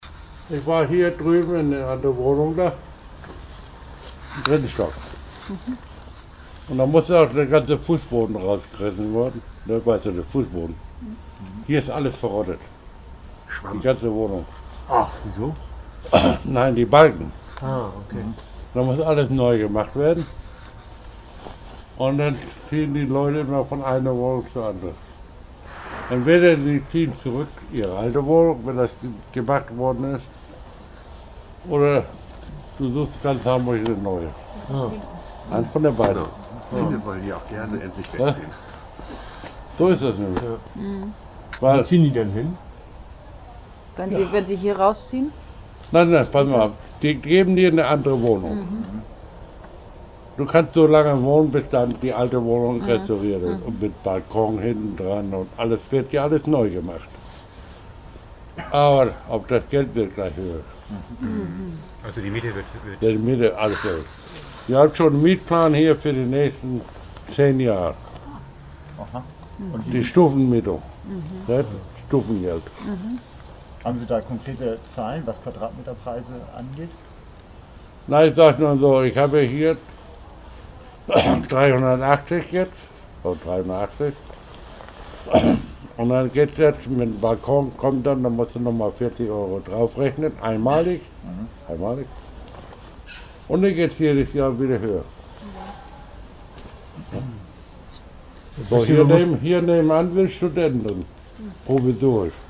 ein mieter berichtet über die folgen der sanierung der häuser sanitasstrasse / mannesallee durch die saga gwg
Hamburg, Germany